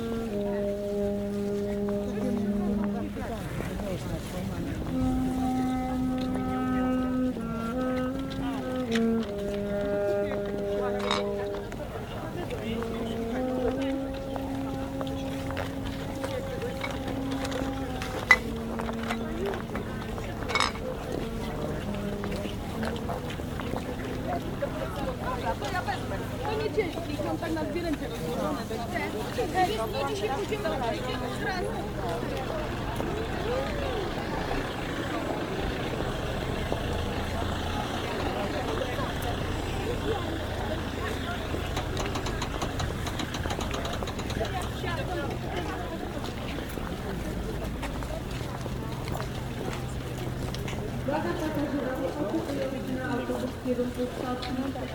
Ku Sloncu, Szczecin, Poland
Crowd at the cemetery.